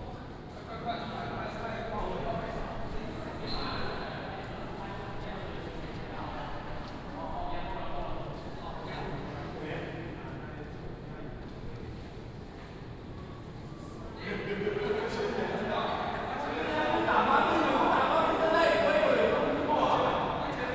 三義天后宮, Miaoli County - In the temple
Community people are practicing traditional dragon dance, traffic sound, Binaural recordings, Sony PCM D100+ Soundman OKM II
Miaoli County, Sanyi Township, 24 September